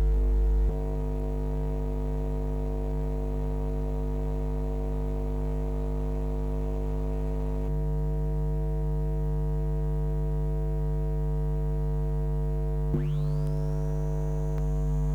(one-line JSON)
{"title": "Utena, Lithuania, electric substatiom", "date": "2015-05-30 15:20:00", "description": "small electric substation in the meadow. the first part of the recording - the ambience around, the second part - a close-up examination of electric field with coil pick-ups.", "latitude": "55.53", "longitude": "25.57", "altitude": "99", "timezone": "Europe/Vilnius"}